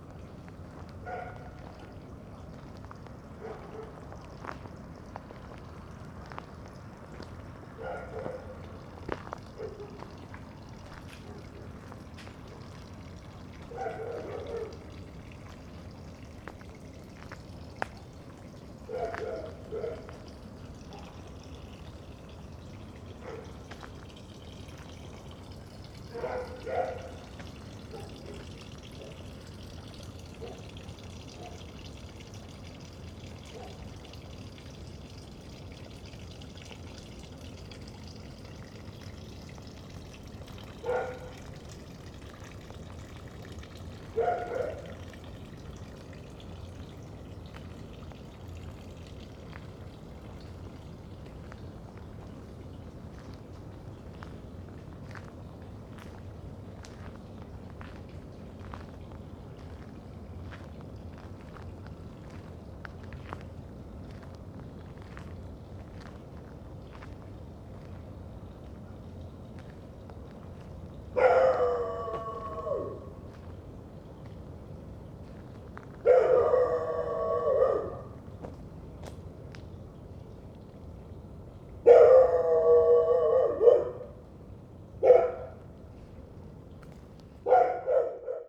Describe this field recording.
a few hours later, in the dark... (Sony PCM D50, Primo EM172)